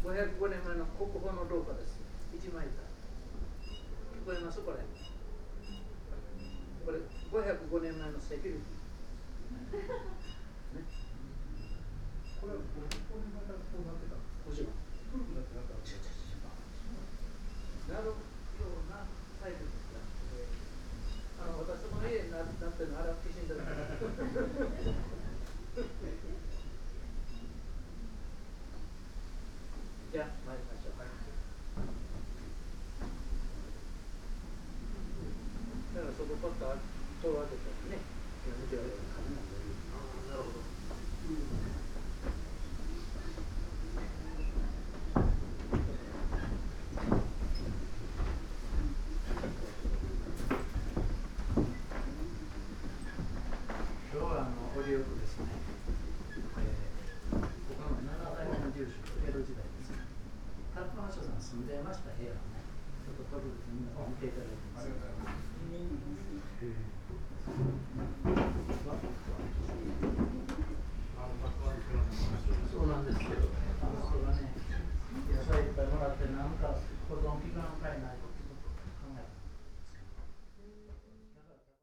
veranda, Daisen-in, Kyoto - quiet garden
gardens sonority, wind, murmur of people, wooden house
Kyōto-shi, Kyōto-fu, Japan, 3 November 2014, 13:01